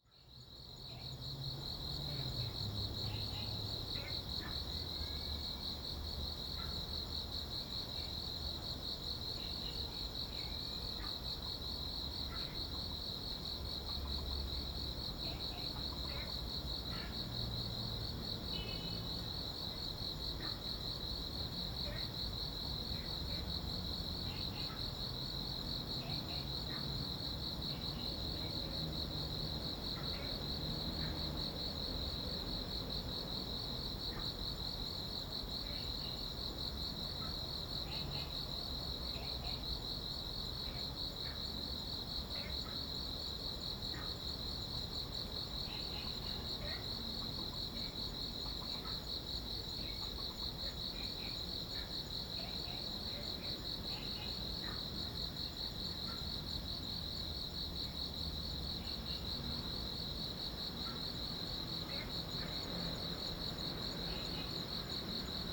福州山公園, Taipei City, Taiwan - Sound of insects
In the park, Sound of insects, Frog sound
Zoom H2n MS+XY
5 July 2015, 20:08